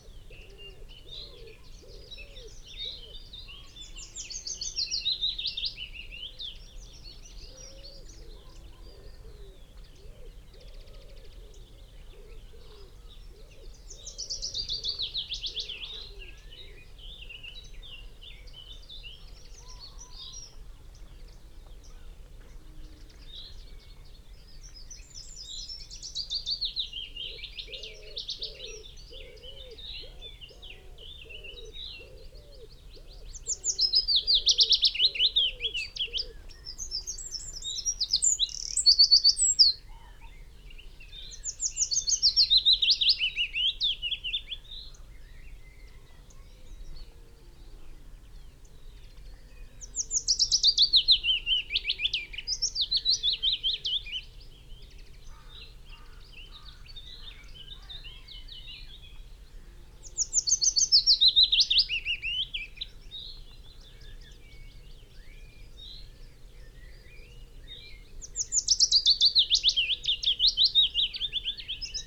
Malton, UK - willow warbler soundscape ...
willow warbler soundscape ... xlr sass on tripod to zoom h5 ... bird song ... calls ... from ... wood pigeon ... yellowhammer ... chaffinch ... pheasant ... wren ... dunnock ... blackcap ... crow ... blackbird ... goldfinch ... linnet ... unattended time edited extended recording ...
Yorkshire and the Humber, England, United Kingdom